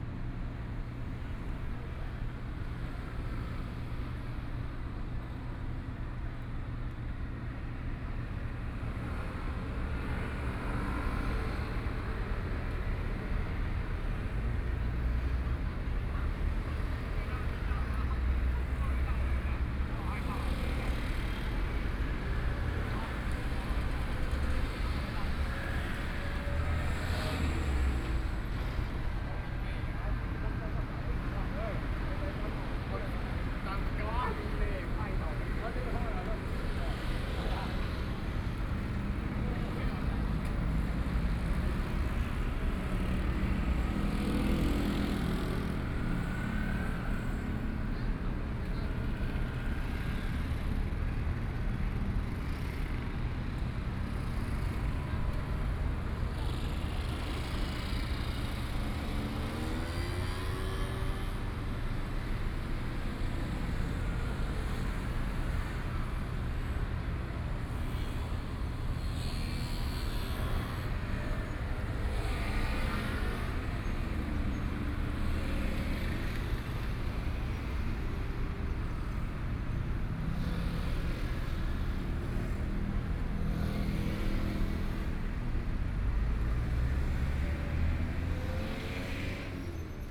Zhonghua Rd., Taitung - Intersection
Traffic Sound, Binaural recordings, Zoom H4n+ Soundman OKM II